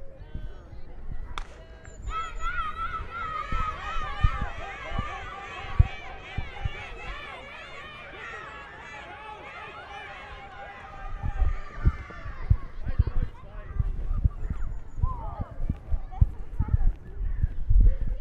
{"title": "Bergisch Gladbach - Refrath: 50 m Lauf 3b - 50 m Lauf 3b", "date": "2009-10-02 10:47:00", "latitude": "50.96", "longitude": "7.11", "altitude": "73", "timezone": "Europe/Berlin"}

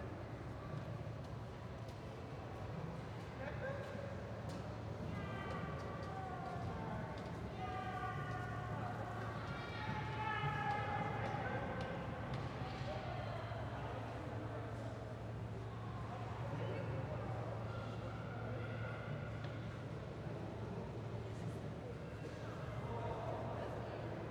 {"title": "Bei den St. Pauli-Landungsbrücken, Hamburg, Deutschland - St. Pauli Elbe Tunnel, entrance area", "date": "2022-04-21 17:05:00", "description": "Old Elbe Tunnel or St. Pauli Elbe Tunnel (German: Alter Elbtunnel colloquially or St. Pauli Elbtunnel officially) which opened in 1911, is a pedestrian and vehicle tunnel in Hamburg. The 426 m (1,398 ft) long tunnel was a technical sensation; 24 m (80 ft) beneath the surface, two 6 m (20 ft) diameter tubes connect central Hamburg with the docks and shipyards on the south side of the river Elbe. This was a big improvement for tens of thousands of workers in one of the busiest harbors in the world.\n(Sony PCM D50, Primo EM272)", "latitude": "53.55", "longitude": "9.97", "altitude": "7", "timezone": "Europe/Berlin"}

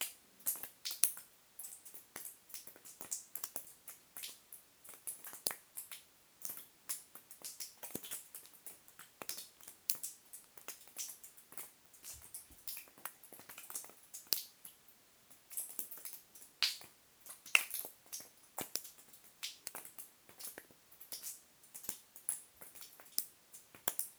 {"title": "Saint-Martin-le-Vinoux, France - Mine drops", "date": "2017-03-28 15:00:00", "description": "Water falling in a cement mine tunnel. Because of a collapsed part, it's now a dead end tunnel.", "latitude": "45.22", "longitude": "5.73", "altitude": "673", "timezone": "Europe/Paris"}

